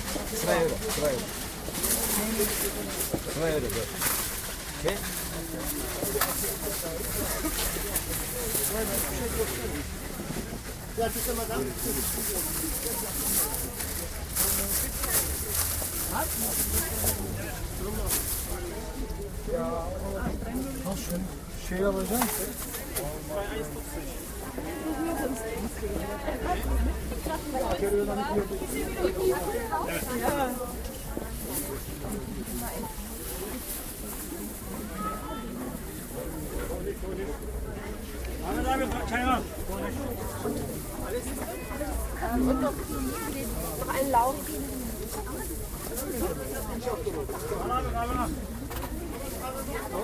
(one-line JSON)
{"title": "Neukölln, Berlin, Deutschland - bags and money", "date": "2010-10-12 13:29:00", "description": "plastic bags and money at maybachufer market", "latitude": "52.50", "longitude": "13.42", "altitude": "39", "timezone": "Europe/Berlin"}